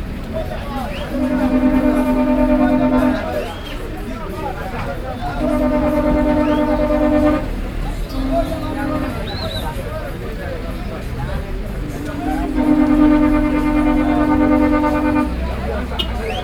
Nairobi Central, Nairobi, Kenya - Traders and Matatus...
A busy market street in the inner city; many wholesalers shops where street traders buy their goods; long lines of Matatu’s waiting and “hunting” for customers….